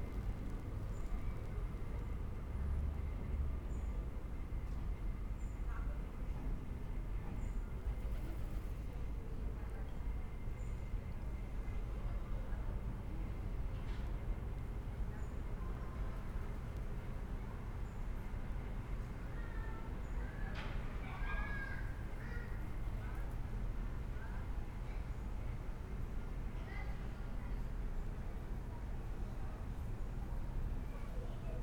Porto, Travessa Santa Clara - metro train, street ambience
street ambience, metro train crossing on bridge above